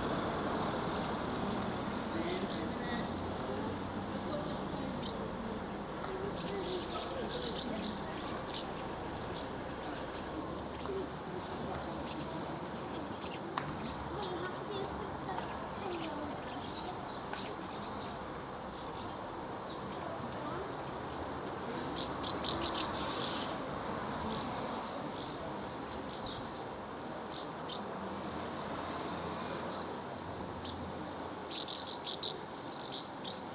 Rue Notre Dame, Villefranche-du-Périgord, France - Swallows - Hirondelles
France métropolitaine, France